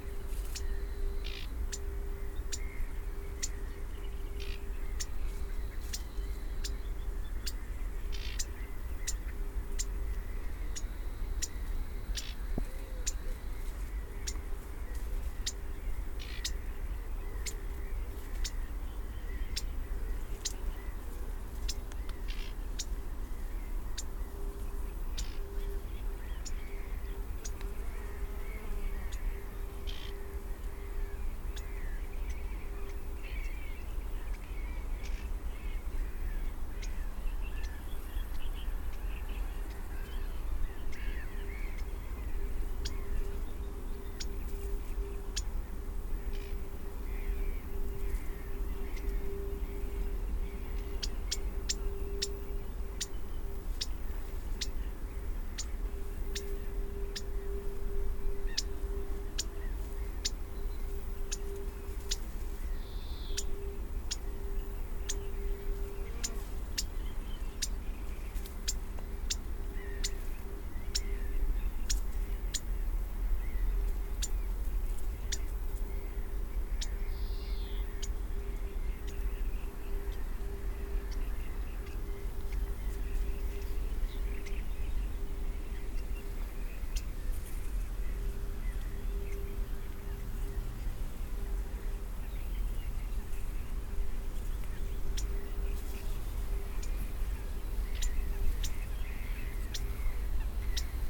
{"title": "Văcărești swamp, bucharest, romania - walking", "date": "2022-07-02 15:35:00", "description": "crazy.\n2 x dpa 6060 mics (fixed on ears).", "latitude": "44.40", "longitude": "26.13", "altitude": "64", "timezone": "Europe/Bucharest"}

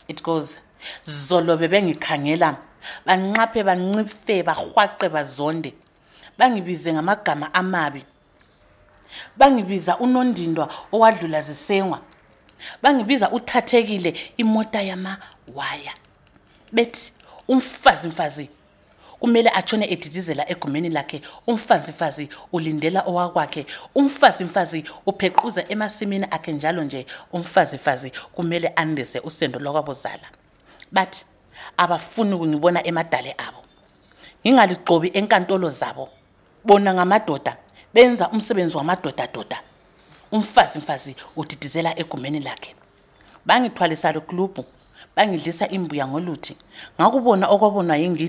{"title": "National Gallery, Bulawayo, Zimbabwe - Star celebrates women power and women’s empowerment…", "date": "2012-10-27 15:43:00", "description": "We were making this recording of a poem in Ndebele in Sithandazile’s studio at the National Gallery of Zimbabwe in Bulawayo just above the courtyard café, the doors to the balcony are open...\nSithandazile Dube is performance poet.", "latitude": "-20.15", "longitude": "28.58", "altitude": "1351", "timezone": "Africa/Harare"}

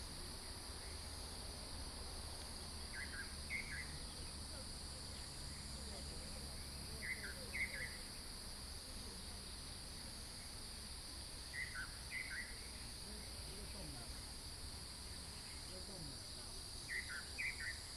{"title": "青蛙ㄚ 婆的家, 桃米里, Puli Township - Bird calls", "date": "2015-08-11 06:11:00", "description": "In the morning, Bird calls, Cicadas cry", "latitude": "23.94", "longitude": "120.94", "altitude": "463", "timezone": "Asia/Taipei"}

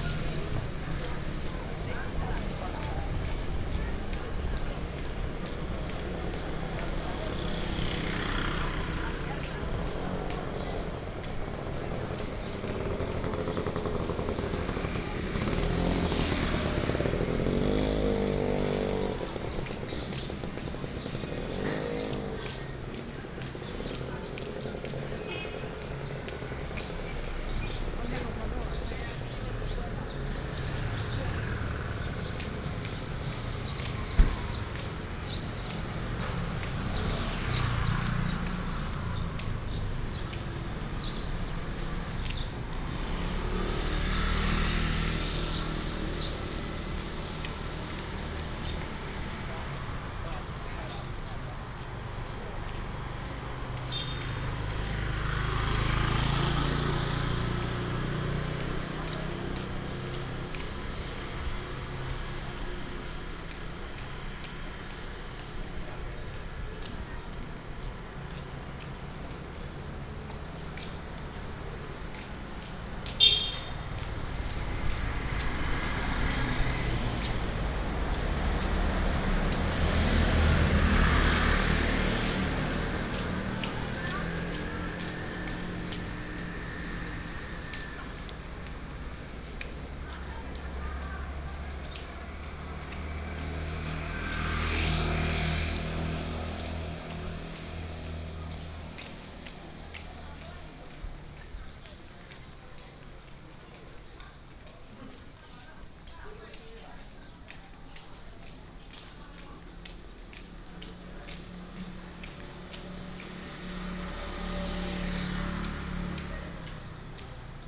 {"title": "Guangwen Rd., Ershui Township, Changhua County - Walking in the hamlet of the street", "date": "2018-02-15 09:04:00", "description": "Walking in the hamlet of the street, lunar New Year, traffic sound, Footsteps\nBinaural recordings, Sony PCM D100+ Soundman OKM II", "latitude": "23.81", "longitude": "120.62", "altitude": "86", "timezone": "Asia/Taipei"}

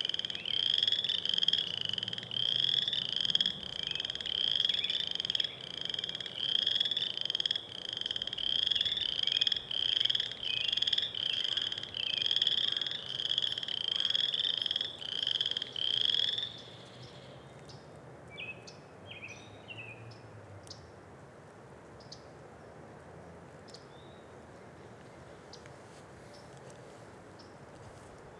{"title": "Coralville, IA, USA - Bullfrogs along Clear Creek", "date": "2021-04-17 10:04:00", "description": "Bullfrogs along the Clear Creek Trail in Coralville, Iowa recorded with Rode NT5 microphones in an A-B configuration into a Sound Devices Mixpre-6.", "latitude": "41.68", "longitude": "-91.59", "altitude": "205", "timezone": "America/Chicago"}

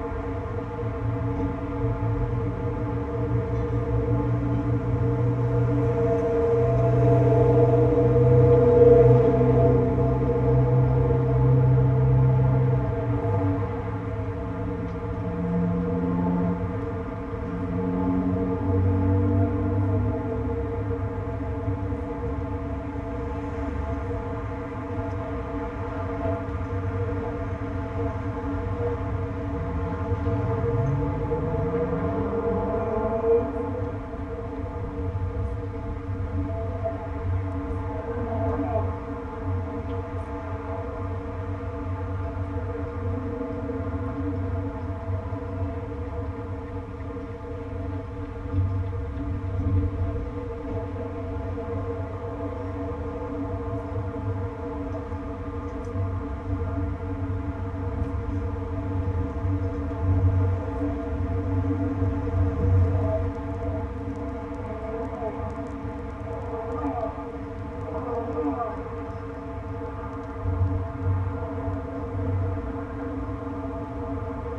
{"title": "Maribor, Slovenia - one square meter: handrail support poles, second pair", "date": "2012-08-27 13:02:00", "description": "a series of poles along the riverside that once supported handrails for a now-overgrown staircase down to the waters edge. the handrails are now gone, leaving the poles open to resonate with the surrounding noise. all recordings on this spot were made within a few square meters' radius.", "latitude": "46.56", "longitude": "15.65", "altitude": "263", "timezone": "Europe/Ljubljana"}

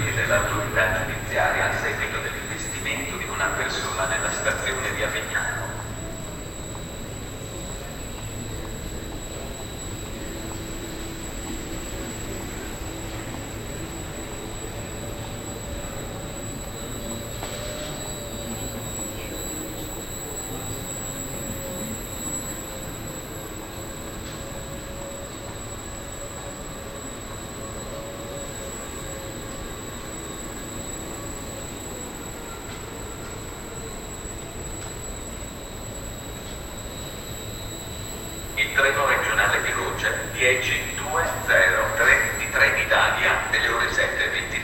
{"title": "Ascolto il tuo cuore, città, I listen to your heart, city, Chapter CXXXII - Far soundwalk and soundtraintrip with break", "date": "2020-09-24 07:00:00", "description": "\"Far soundwalk and soundtraintrip with break in the time of COVID19\" Soundwalk\nChapter CXXII of Ascolto il tuo cuore, città. I listen to your heart, city\nThursday, September 24th, 2020. Walk + traintrip to a far destination; five months and thiteen days after the first soundwalk (March 10th) during the night of closure by the law of all the public places due to the epidemic of COVID19.\nThis path is part of a train round trip to Cuneo: I have recorded only the walk from my home to Porta Nuova rail station and the train line to Lingotto Station. This on both outward and return\nRound trip where the two audio files are joined in a single file separated by a silence of 7 seconds.\nfirst path: beginning at 7:00 a.m. end at 7:31 a.m., duration 30’53”\nsecond path: beginning at 4:25 p.m. end al 5:02 p.m., duration 26’37”\nTotal duration of recording 00:56:37\nAs binaural recording is suggested headphones listening.", "latitude": "45.06", "longitude": "7.68", "altitude": "248", "timezone": "Europe/Rome"}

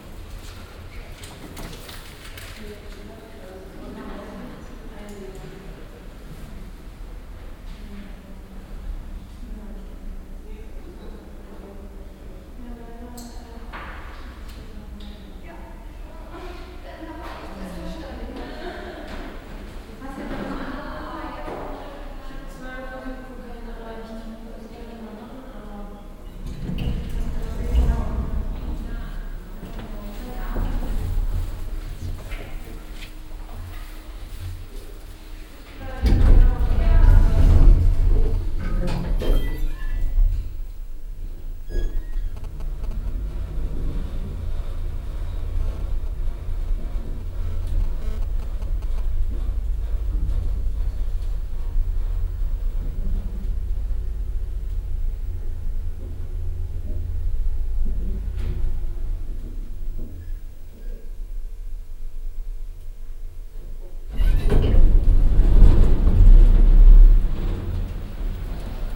{"title": "cologne, werderstrasse, ifs", "date": "2008-06-18 20:40:00", "description": "flur und treppenhaus der internationalen film schule (ifs), gang bis zur vierten etage, herunterfahrt mit dem aufzug, hierbei kleine handyeinstreuung, mittags\nsoundmap nrw/ sound in public spaces - in & outdoor nearfield recordings", "latitude": "50.94", "longitude": "6.94", "altitude": "53", "timezone": "Europe/Berlin"}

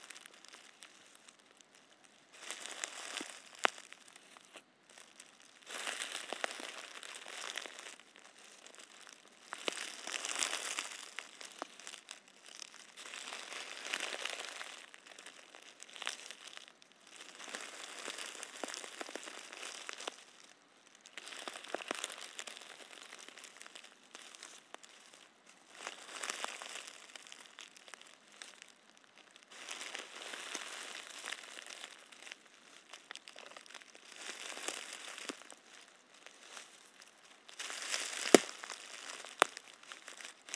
{"title": "La Vallerie, Champsecret, France - Feuilles forêt dAndaines", "date": "2021-03-19 14:30:00", "description": "I have fun crushing the dead leaves.", "latitude": "48.61", "longitude": "-0.54", "altitude": "218", "timezone": "Europe/Paris"}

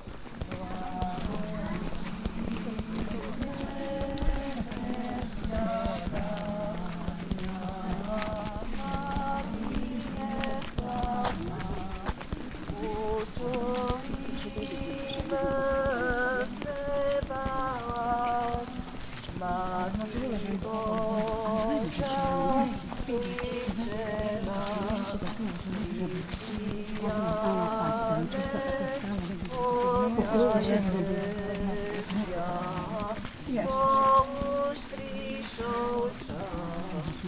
{"title": "Runina, Runina, Slovensko - Púť na Tri Studničky / Pilgrimage to Tri Studnicky", "date": "2020-08-02 08:29:00", "latitude": "49.08", "longitude": "22.41", "altitude": "627", "timezone": "Europe/Bratislava"}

